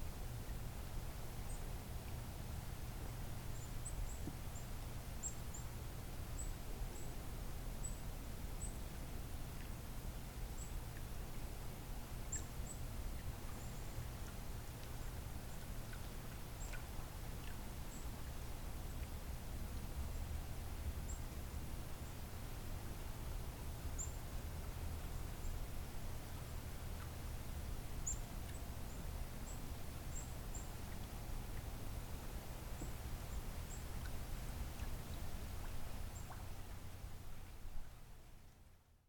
Three Pines Rd., Bear Lake, MI, USA - First Snow of Winter 2015-16
A very still Saturday afternoon. The season's first snow is lightly falling. Vehicle passes on the road beyond the house. Gentle water sounds against the north shore of Bear Lake. As heard from the top of steps leading down to water's edge. Stereo mic (Audio-Technica, AT-822), recorded via Sony MD (MZ-NF810).